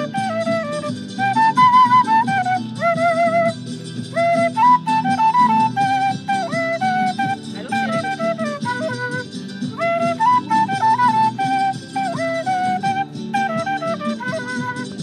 A flute player from Chile performing by the canal to a playback track.
Sunny Sunday, summer has just started, after a humid midsummer night.
Recorded on a Sony PCM100